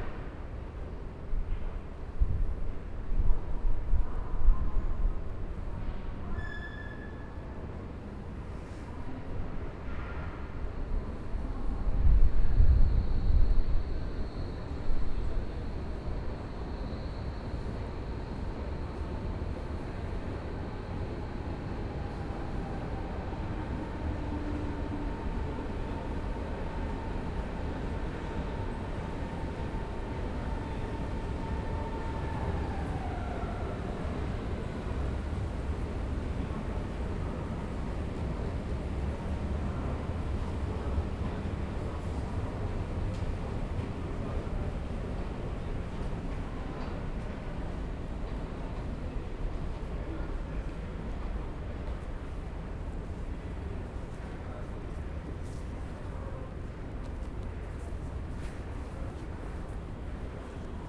Berlin Alexanderplatz Station walk in pandemic times. Only few people around at a Wednesday around midnight.
(Sony PCMD50, DPA 4060)
Berlin, Germany, 7 April 2021